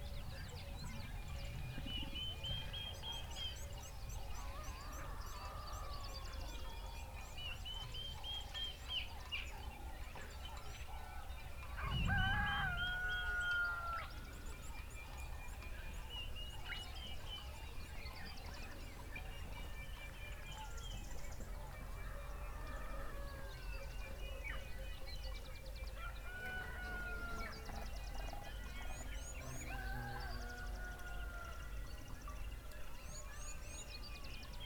Harmony farm, Choma, Zambia - early morning work in the fields
morning birds and voices of people working in the fields somewhere out there....
Southern Province, Zambia, 6 September 2018